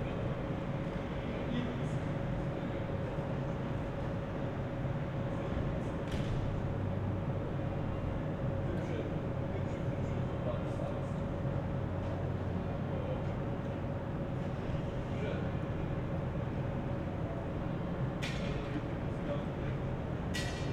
Maribor, Gospejna ulica, yard - churchbells at noon, ambience
yard ambience at Gospejna ulica, bells from the nearby church at noon, hum of many aircons
(SD702 Audio Technica BP4025)